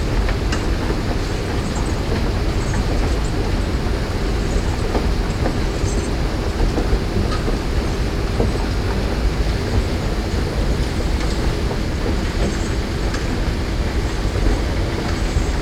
Sound environment in the brown coal mine Nástup near Tušimice Power Plant. The mine uses for stripping operations KU 800, SRs 1500 Series TC2 and SChRs excavators. The stripped soil is transported by long haul 1800 mm wide conveyor belt to dumping machines of the same series. Coal in the open cast mine is extracted by KU 300 S and KU 800 N Series TC1 excavators.
Kadaň, Czech Republic